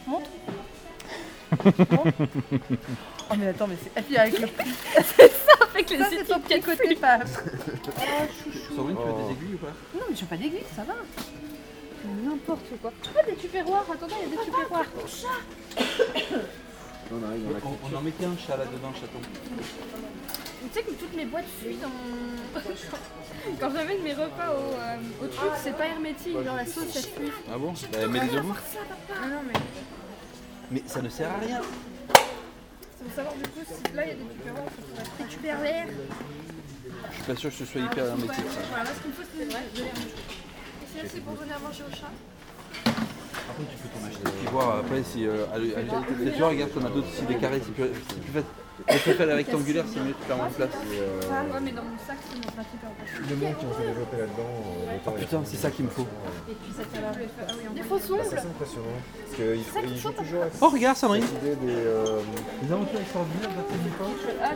Maintenon, France - Supermarket
Recording of the clients in the supermarket just before Christmas.
24 December 2016